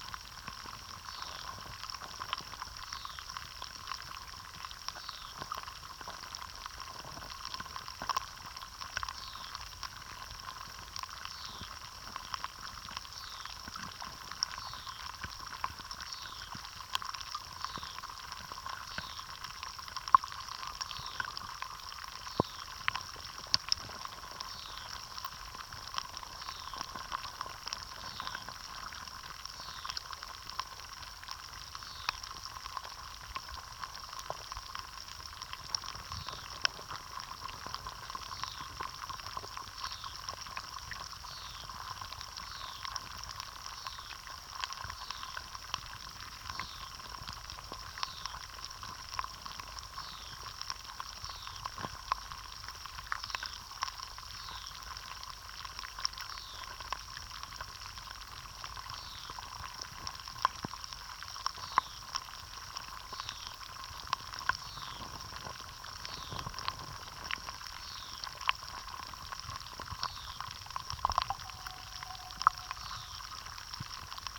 {"title": "Vyžuonos, Lithuania, lake Lydekis underwater", "date": "2022-06-17 18:20:00", "description": "Hydrophone. Some distant species.", "latitude": "55.58", "longitude": "25.51", "altitude": "91", "timezone": "Europe/Vilnius"}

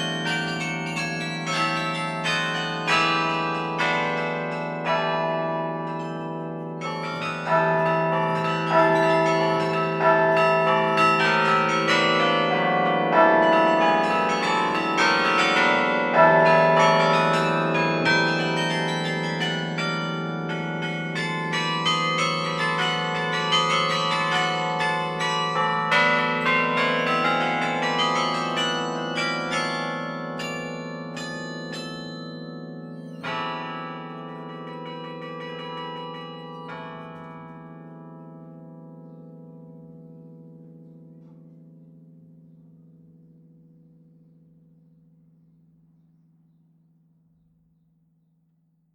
{"title": "Lieu-dit Les Pres Du Roy, Le Quesnoy, France - Le Quesnoy - Carillon", "date": "2020-06-14 10:00:00", "description": "Le Quesnoy - Carillon\nMaitre Carillonneur : Mr Charles Dairay", "latitude": "50.25", "longitude": "3.64", "altitude": "132", "timezone": "Europe/Paris"}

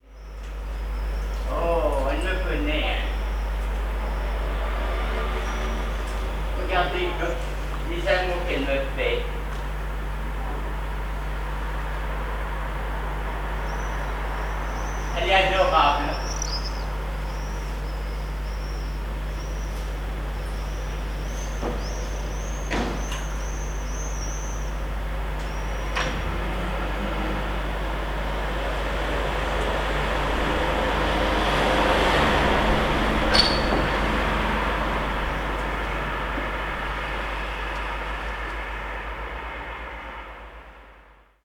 {"date": "2008-07-20 09:59:00", "description": "Paris, Rue de malte, man talking to a cat", "latitude": "48.87", "longitude": "2.37", "altitude": "46", "timezone": "Europe/Paris"}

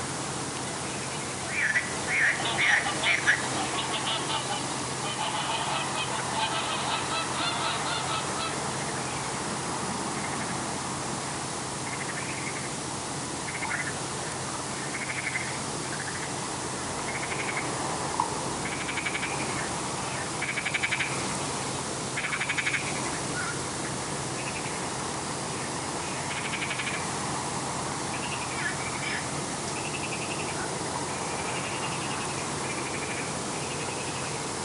{"title": "Orange Grove by Çıralı Mezarlığı, Turkey - Portakal Ağacı Korusu", "date": "2018-12-21 23:36:00", "description": "Recorded with a Sound Devices MixPre-3 and a pair of DPA4060s", "latitude": "36.41", "longitude": "30.47", "altitude": "1", "timezone": "Europe/Istanbul"}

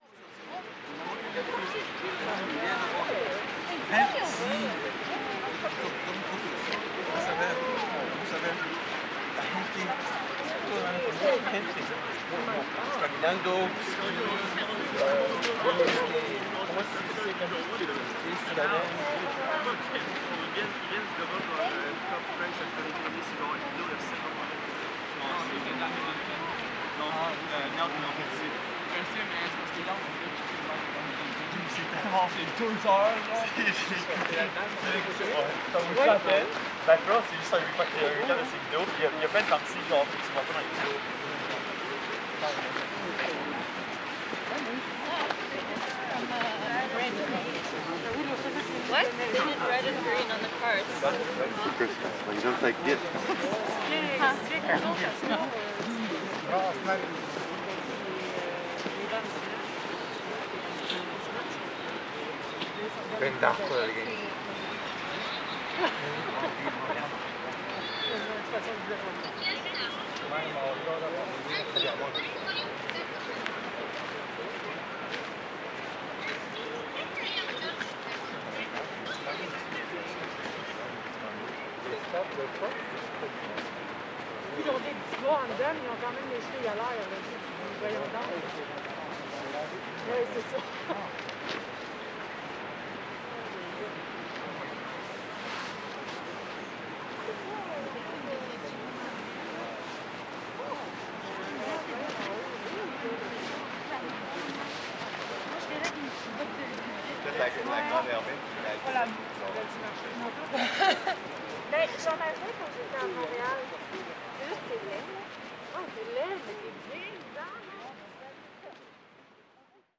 {"title": "Rue de la Commune E, Montréal, QC, Canada - Promenade du Vieux-Port", "date": "2021-01-02 18:40:00", "description": "Recording of large public space with groups of pedestrians walking the area. There were no winter festivities which would usually produce much more of an active space.", "latitude": "45.51", "longitude": "-73.55", "altitude": "12", "timezone": "America/Toronto"}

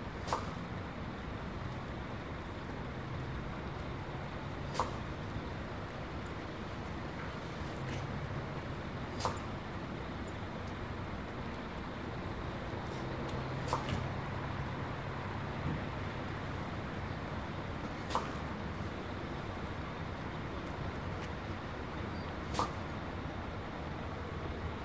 {"title": "Bus station, ul. Knychalskiego Lodz", "date": "2011-11-18 10:35:00", "description": "bus station Lodz", "latitude": "51.77", "longitude": "19.47", "altitude": "213", "timezone": "Europe/Warsaw"}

